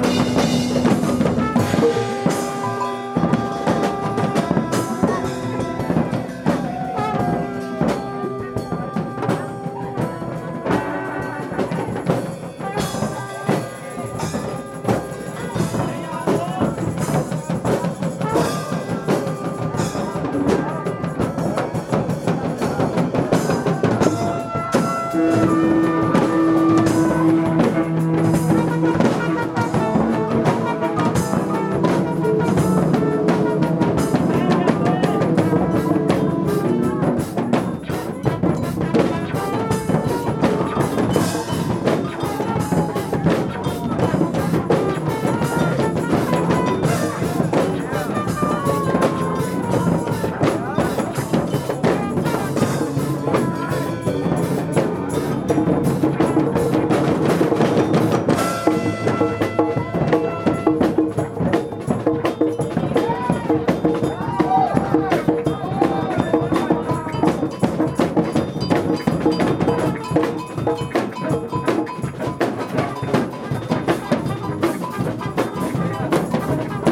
letzte Session
die letzte wunderbare wilde Session... letzte, allerletzte. wir sehen uns wieder!